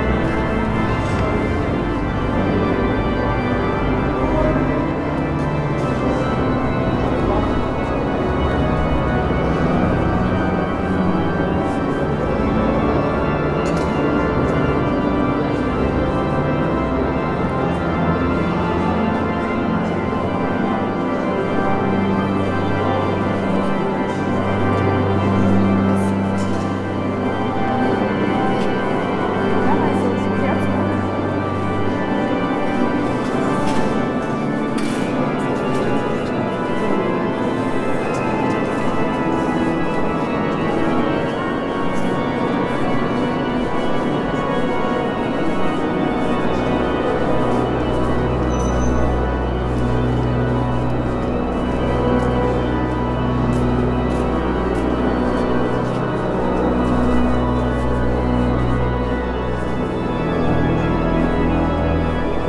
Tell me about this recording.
Inside the cathedrale. The mass on Palm Sunday had just finished, lots of people leaving and entering the church at the same time, the organ is still playing.